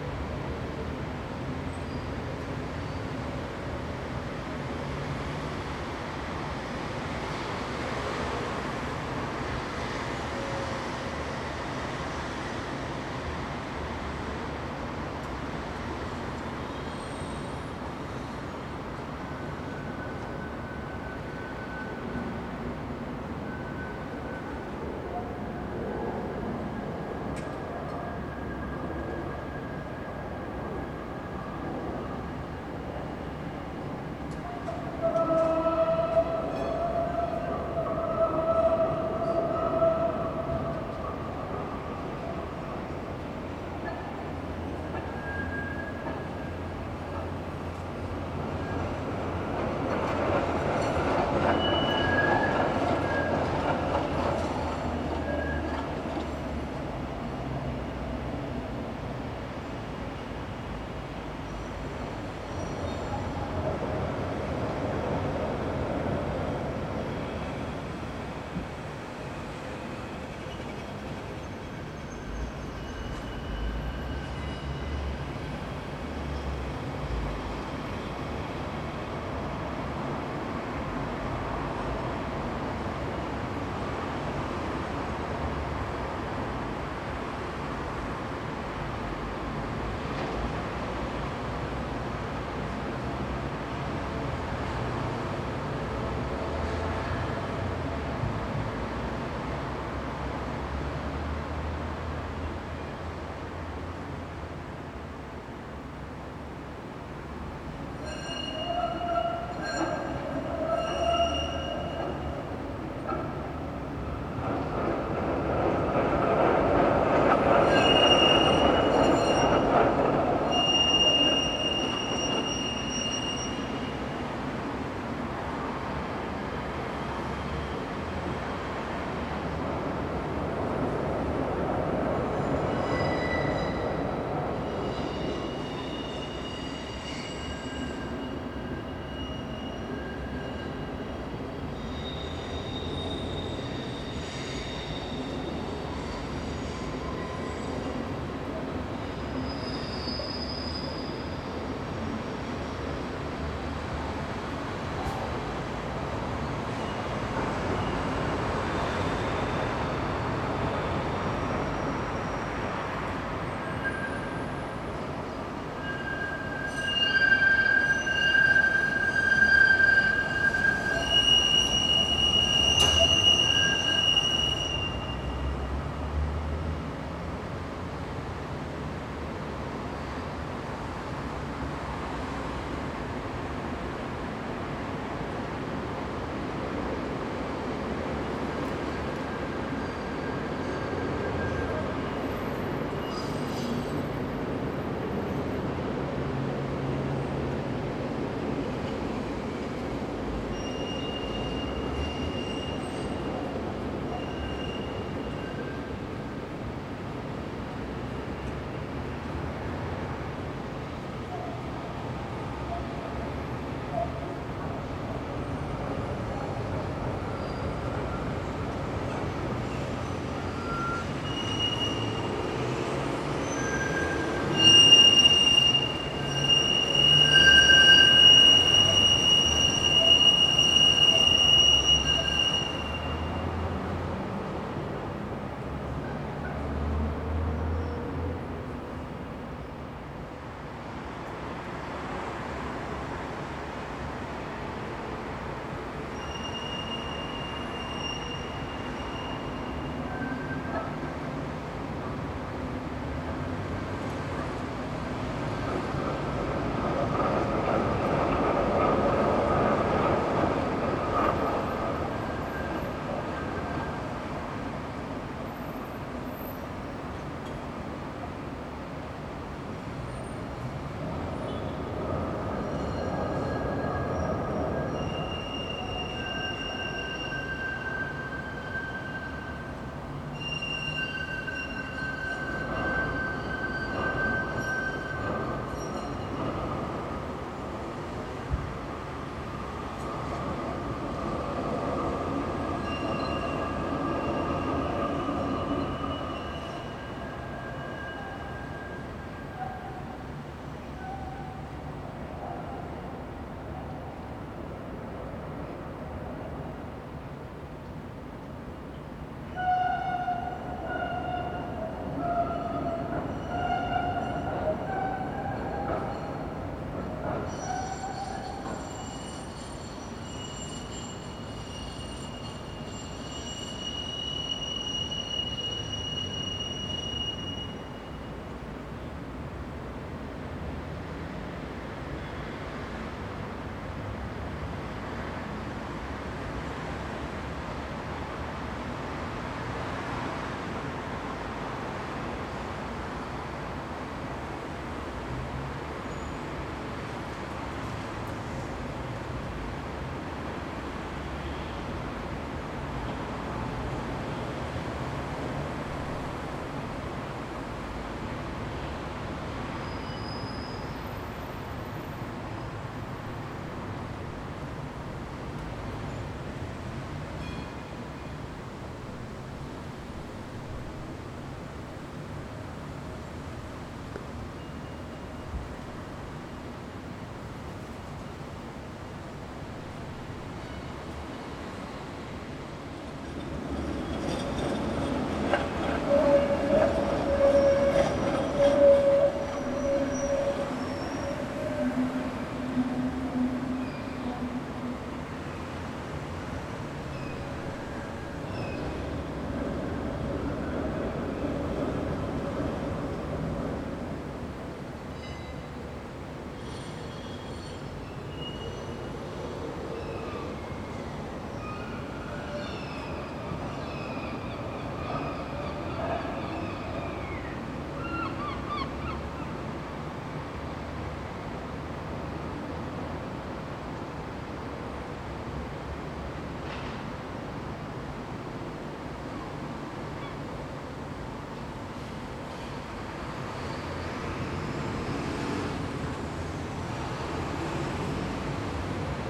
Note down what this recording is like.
Recorded around 8:30AM from a balcony near the Rijswijkseplein, The Hague. At my girlfriend's house you can hear a never ending stream of traffic sounds. There's an elevated train, many cars and motorcycles, trams (with their squeaking wheels) and even a few boats. A very rich mixture, so I tried to record it.